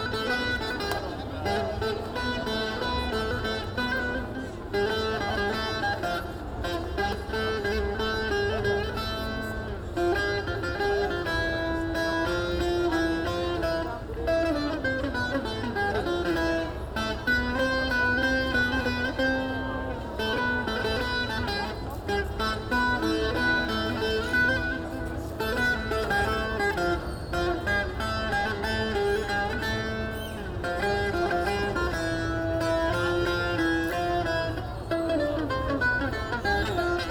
Istanbul, Istiklal. - Streetmusician playing Saz at Istiklal Caddesi